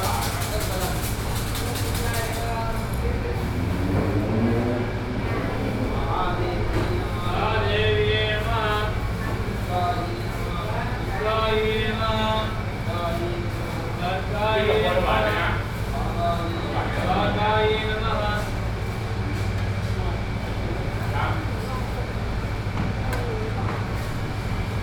Si Lom, Khwaeng Silom, Khet Bang Rak, Krung Thep Maha Nakhon, Thailand - Hindutempel Sri Maha Mariamman mit Betautomat und Priestern Bangkok
At the Sri Maha Mariamman Hindu temple in Bangkok. Intense atmosphere of hindu believers as well as others seeking support in fertility. There is a permanently looped chant coming from loudspeakers, and there are priests mumbling certain phrases when believers bring offerings to the responsible god.